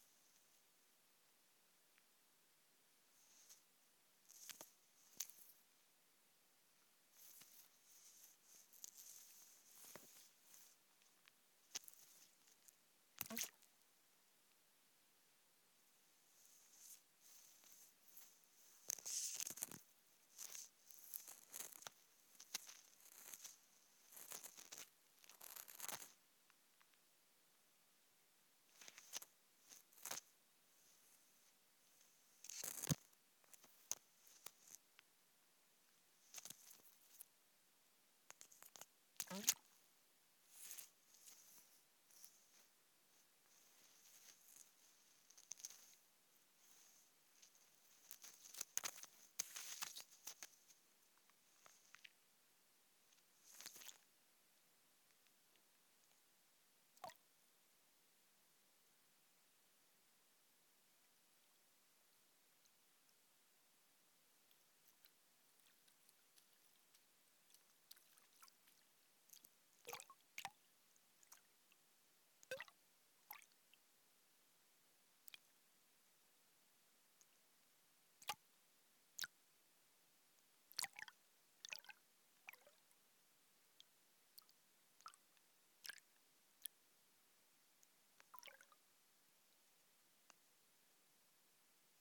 Mollerup skov, Risskov, Danmark - Under water recording of lake in Mollerup Forrest
Drips and splashes from the microphone landing in the water of a small lake. Also contains sounds from the microphone touching the stone and plants on the bottom of the lake.
Recorded using a Hydrophone for under water recordings.